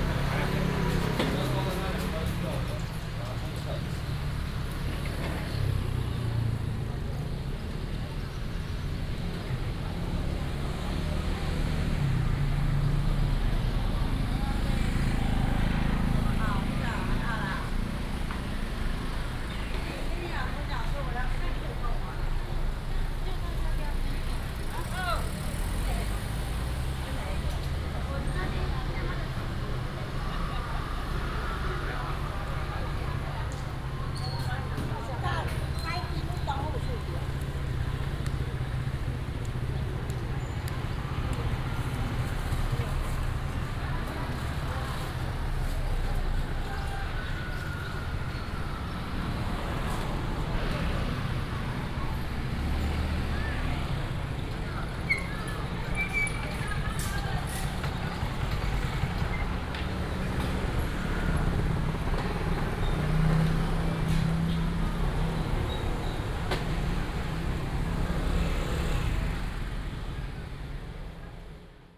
Walking in the alley, Traffic sound, Morning in the area of the market
Guanxi Township, Hsinchu County, Taiwan, July 2017